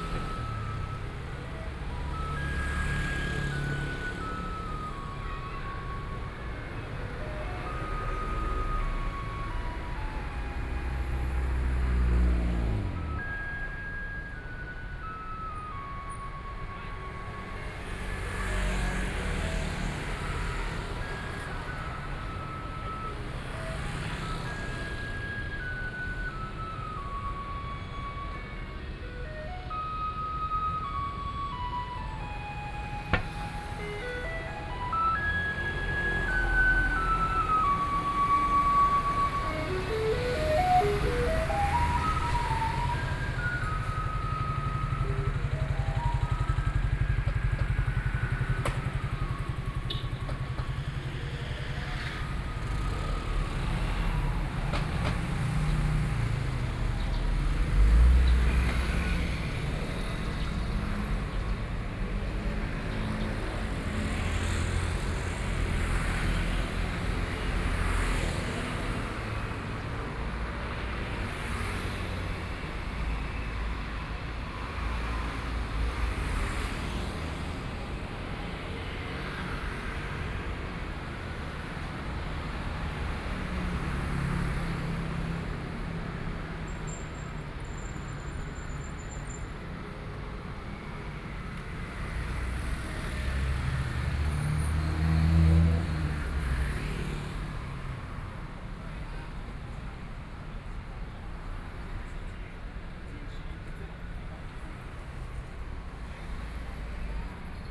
Xinsheng Rd., Taitung City - Walking on the road
Traffic Sound, Garbage truck music, Various shops voices, Binaural recordings, Zoom H4n+ Soundman OKM II ( SoundMap2014016 -24)
Taitung County, Taiwan, 16 January 2014, ~18:00